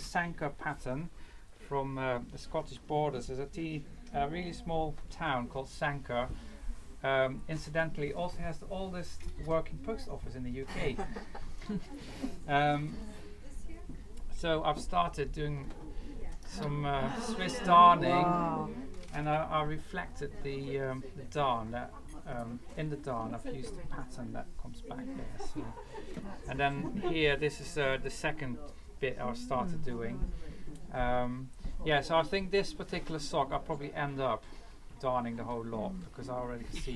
Jamieson & Smith, Shetland Islands, UK - Tom of Holland's master darning class, Shetland Wool Week, 2013
This is the sound of the wonderful Tom van Deijnen AKA Tom of Holland introducing his darning masterclass during Shetland Wool Week 2013. Tom is an exceedingly talented mender of clothes as well as a superb knitter. Meticulous in detail and creative with his ideas, his philosophy on mending clothes is both imaginative and practical. I love this introduction at the start of his class, where you can clearly hear how impressed everyone in attendance is to see Tom's wonderful examples of mended and hand-knitted clothes, and you can also hear some of the busyness and atmosphere in the Jamieson & Smith wool shop during Wool Week - the frequency of the chimes on the door jangling every few seconds signify the huge numbers of folk coming in and out to buy yarn! Listen out for "oohs" and "aahs" as Tom produces his textiles for people to see.